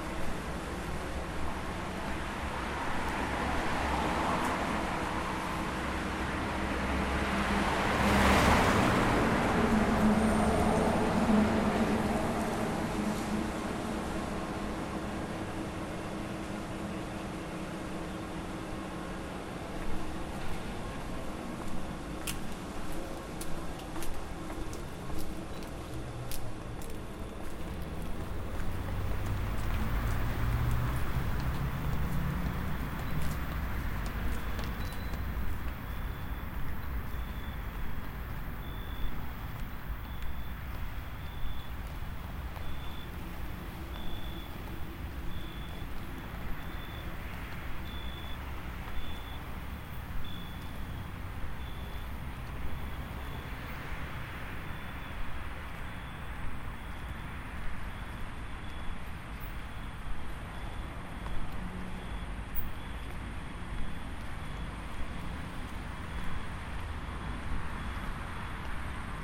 Sector, Bucharest, Romania - Nightwalk in Bucharest
Late night walk from Buzesti Str. onto Berzei Str. and the National Opera Park.